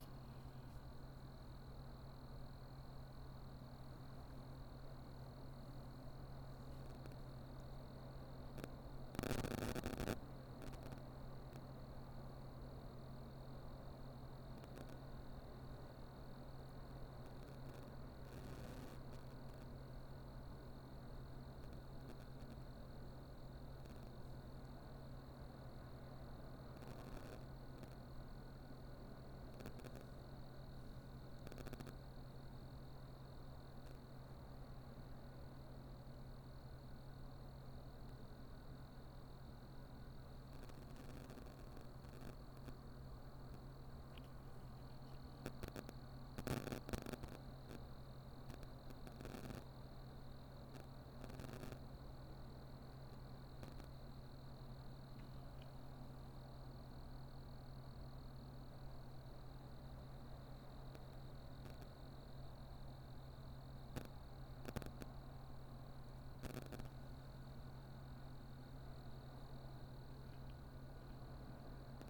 Appleton, WI, USA - Crickets Behind Warch
Humming of some machine powering Warch throughout. Frequent crackles from the recording device. This is on the path that goes below Warch towards Trever. Unclear how many crickets there are.